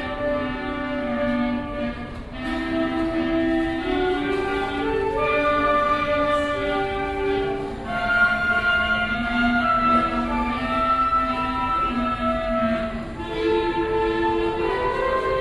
berlin: u-bahnhof schönleinstraße - Kinder Combo U-8